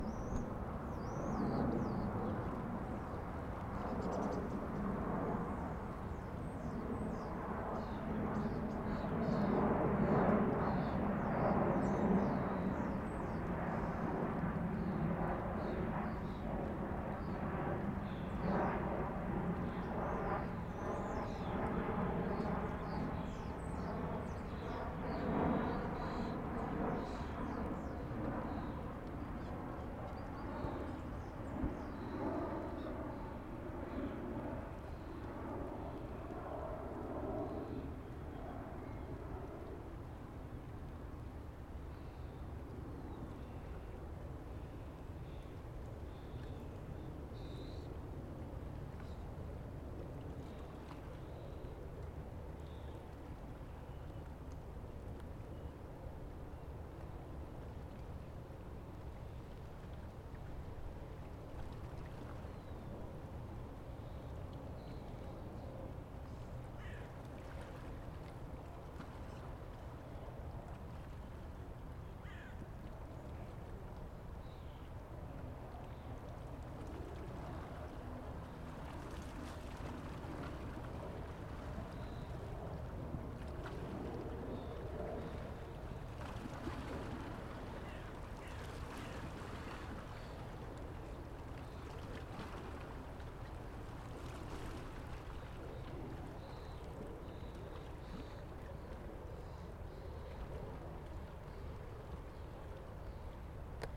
Franziska-Retzinger-Promenade, Wiesbaden, Deutschland - Plätschern des Rheins am Ufer
Plätschern des Rheins am Ufer in der nähe der Mainmündung. Fluglärm. Vogelstimmen.
11 May 2019, 14:22